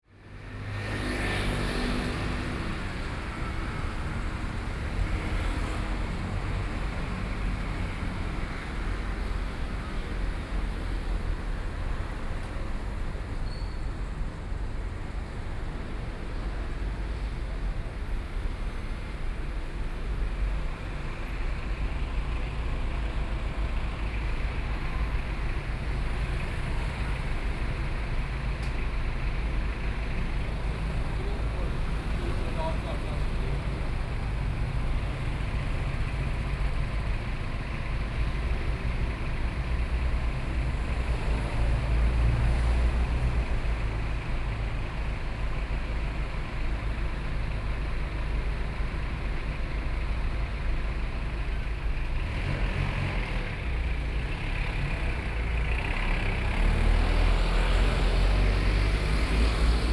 Sec., Zhongshan Rd., 宜蘭市民權里 - at the roadside
at the roadside, Traffic Sound
Sony PCM D50+ Soundman OKM II